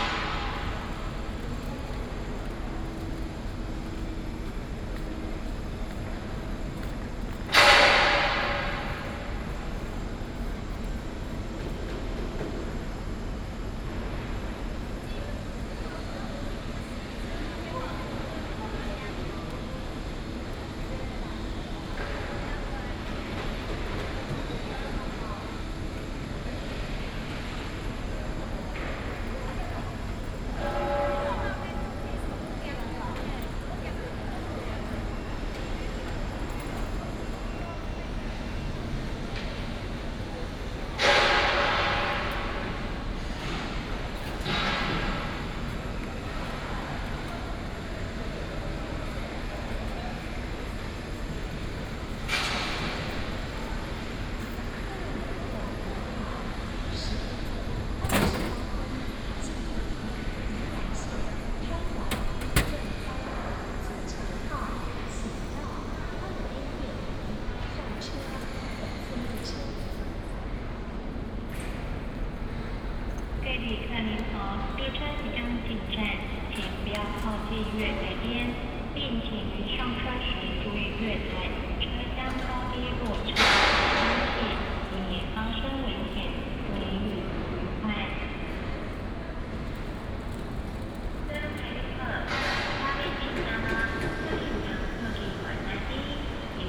臺中火車站, Taichung City - In the station platform
In the station platform, Traffic sound, Construction sound
East District, Taichung City, Taiwan